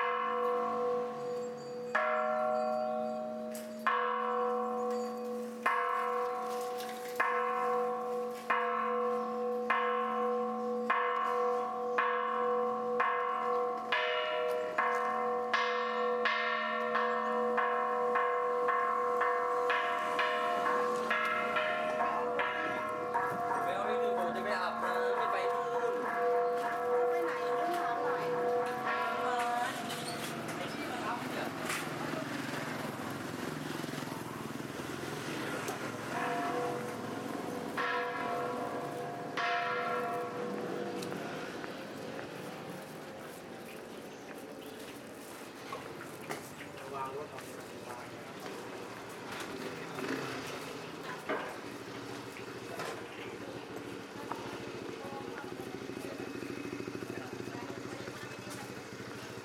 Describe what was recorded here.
gong wat sangwet witsayaram bangkok